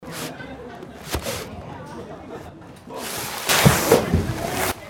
automatische wasserskianlage, nachmittags
hier: vorbereiten der ski auf der absprungmatte
soundmap nrw - sound in public spaces - in & outdoor nearfield recordings